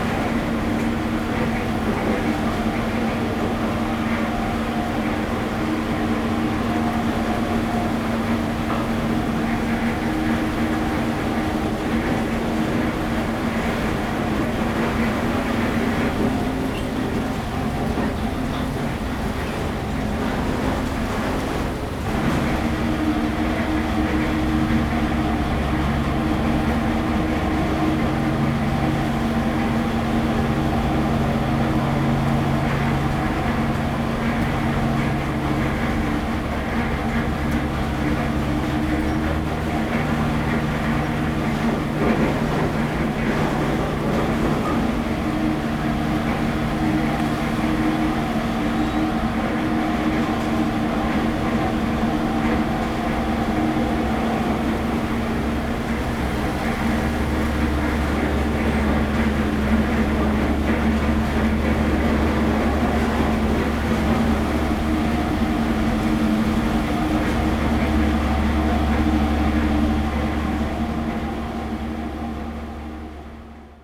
馬公港, Penghu County - Ice making factory
In the fishing port, Ice making factory, The big blocks of ice delivered to the fishing boat
Zoom H2n MS+XY